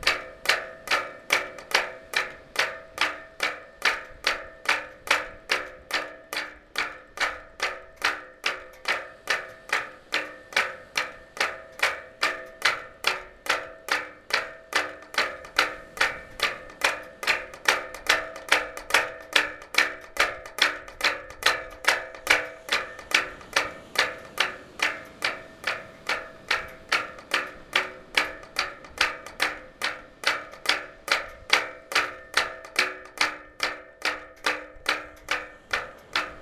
Le Bois-Plage-en-Ré, France - Wind on the boats masts
The weather is good but there's a strong wind today. Masts of the small boats move on the wind.
22 May 2018